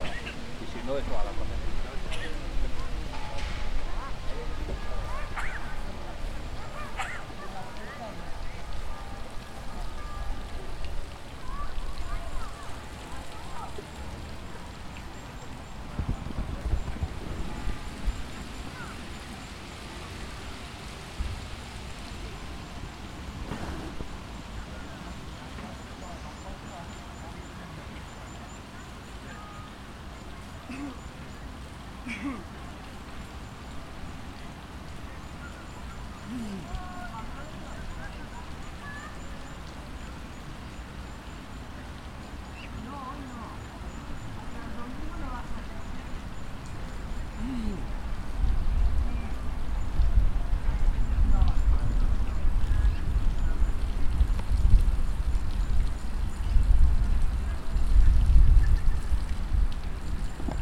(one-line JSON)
{"title": "Pamplona, Navarra, España - afeternoon in the middle of the Ciudadela", "date": "2016-08-12 13:16:00", "description": "Ciudadela's Park. TASCAM DR-40", "latitude": "42.81", "longitude": "-1.65", "altitude": "450", "timezone": "Europe/Madrid"}